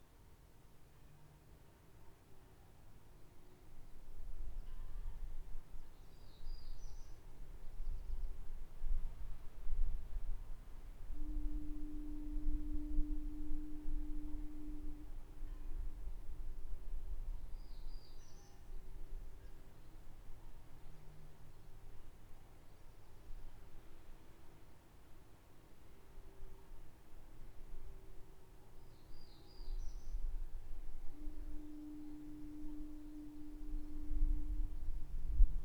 Buoy, Ucluelet, BC
Noises from distant buoy, boat and bird in fog